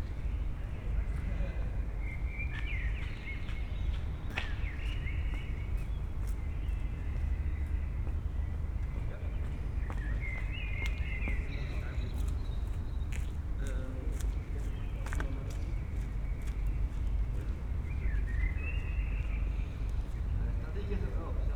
Berlin: Vermessungspunkt Maybachufer / Bürknerstraße - Klangvermessung Kreuzkölln ::: 12.07.2012 ::: 04:09